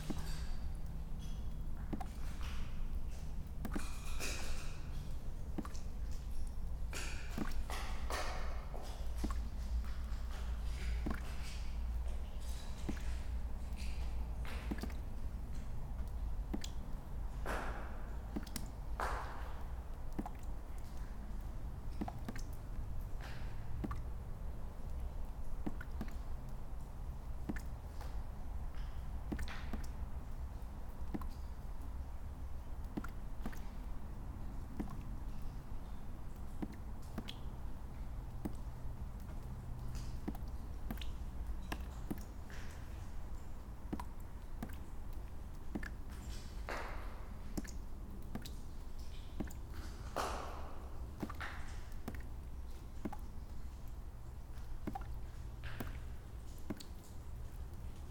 This abandoned factory is full of broken glass which pops when you walk on it, and cracks in the ceiling through which rain leaks in noisy droplets. This is the sound of pops and drops. Recorded with EDIROL R-09.